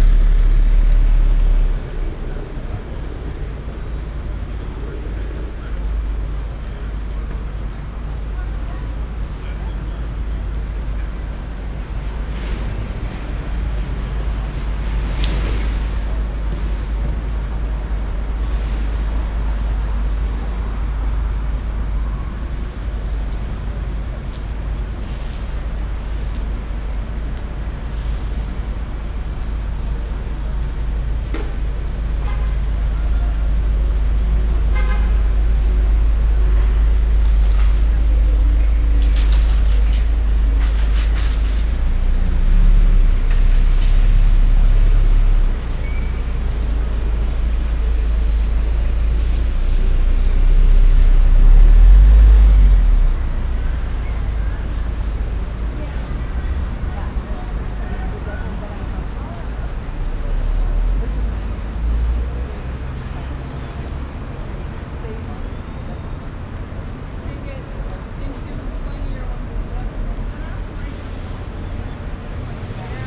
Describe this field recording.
traffic in the morning time at a busy crossing downtown, soundmap international, social ambiences/ listen to the people - in & outdoor nearfield recordings